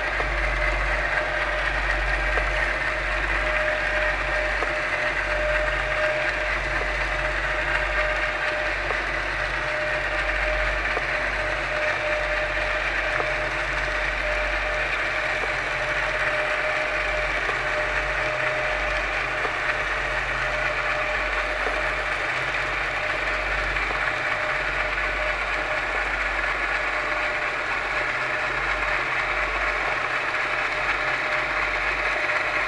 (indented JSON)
{
  "title": "Speedwellstraat, Rotterdam, Netherlands - Underwater recording",
  "date": "2022-03-07 16:00:00",
  "description": "Recording made using 2 hydrophones and 2 geofons attached to the handrail",
  "latitude": "51.90",
  "longitude": "4.44",
  "timezone": "Europe/Amsterdam"
}